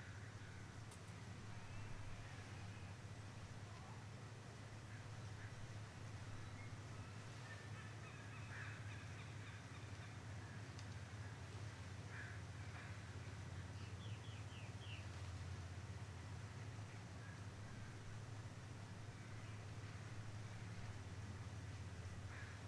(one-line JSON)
{"title": "Haines Wharf Park, Edmonds, WA - Train #4: Haines Wharf", "date": "2019-07-23 08:23:00", "description": "Halfway between the Edmonds train depot and Picnic Point, we stop at tiny Haines Wharf Park, which is the only public access to the railroad tracks in the high-priced real estate along the waterfront -- albeit fenced-off, long-abandoned, and posted \"No Trespassing.\" We wait until a northbound freight rumbles past with nary a wave. The whole time I was waiting an unmarked security guard waited in his idling car behind me.", "latitude": "47.85", "longitude": "-122.34", "altitude": "9", "timezone": "America/Los_Angeles"}